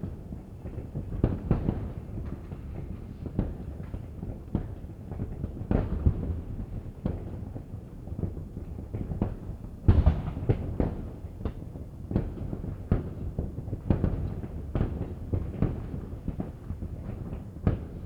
Lange Str., Hamm, Germany - first day of year
Nordrhein-Westfalen, Deutschland, 1 January 2015